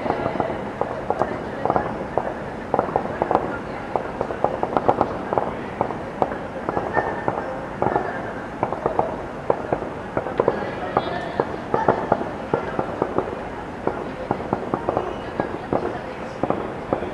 Calle Marx, Madrid, España - Background shooting
Intense shooting heard from the terrace of the Philosophy cafeteria. Bursts of gunfire from the nearby military station "El Goloso" are heard in the background while students talk outside the cafeteria and an occasional car passes by the inner streets of campus.
Sony recorder ICD-PX333